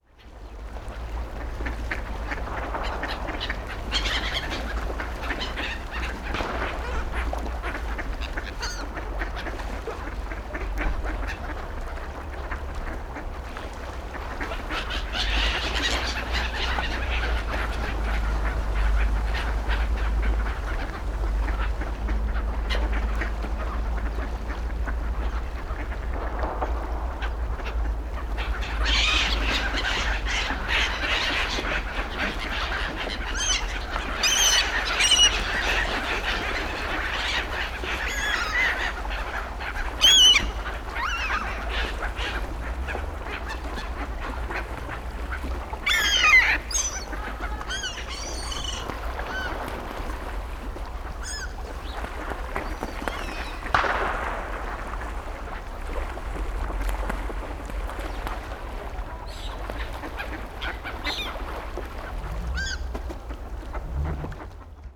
berlin, paul linke ufer - landwehrkanal, ducks and seagulls
someone feeds ducks and seagulls
Berlin, Germany, 1 January 2010, 13:40